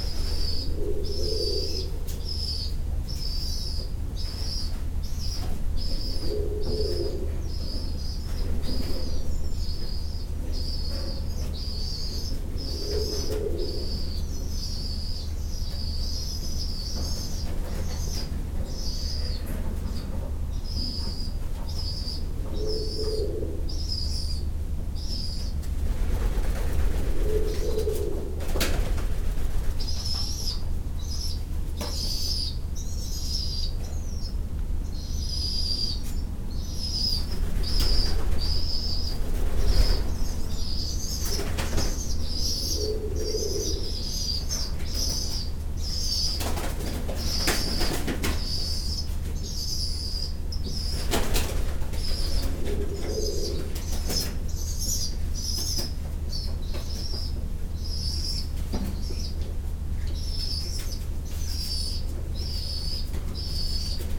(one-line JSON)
{"title": "Courcelles, Belgique - Abandoned factory", "date": "2018-08-05 07:20:00", "description": "Into a very huge abandoned factory, some doves shouting because I'm quite near the nest and the juvenile birds.", "latitude": "50.45", "longitude": "4.40", "altitude": "116", "timezone": "GMT+1"}